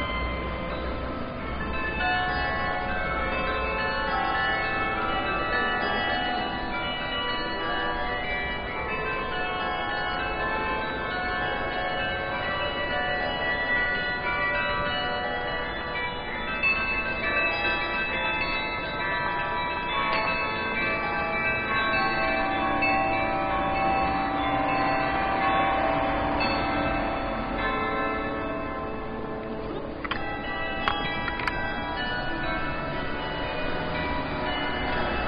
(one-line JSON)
{"title": "Arrondissement, Lyon, France - Carillon de l'hôtel de ville", "date": "2017-03-26 12:12:00", "description": "Carillon 65 cloches -Place des Terreaux à Lyon - Zoom H6 micros incorporés X/Y", "latitude": "45.77", "longitude": "4.83", "altitude": "180", "timezone": "Europe/Paris"}